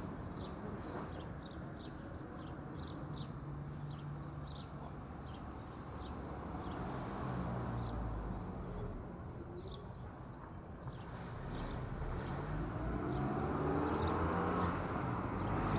Rue Benoît Bressat, Niévroz, France - Bells at 10am
Bells, cars, bikes, birds.
Cloches, voitures, oiseaux et vélos.
Tech Note : Sony PCM-M10 internal microphones.
Auvergne-Rhône-Alpes, France métropolitaine, France, 22 July 2022